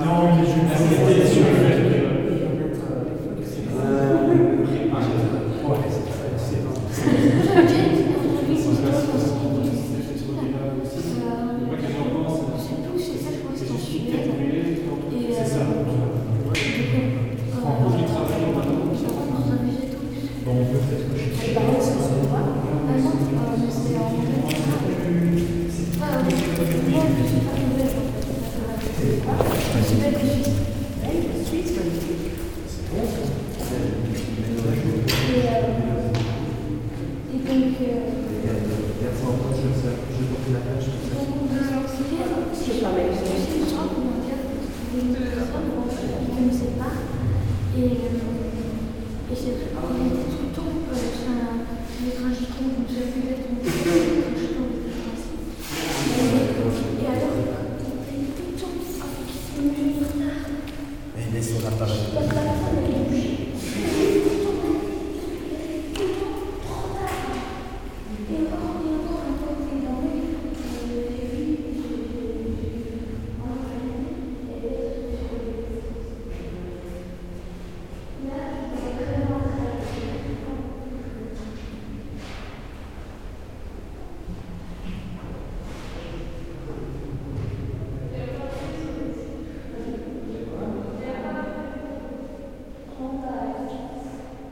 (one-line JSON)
{"title": "Namur, Belgium - Emines bunker", "date": "2017-11-19 14:50:00", "description": "Some students are making a short film, in the called Émines bunker. There's a lot of underground bunkers near the Namur city. Students are talking about their project in a wide room, with a lot of reverb. Bunker is abandoned since the WW2.", "latitude": "50.51", "longitude": "4.85", "altitude": "187", "timezone": "Europe/Brussels"}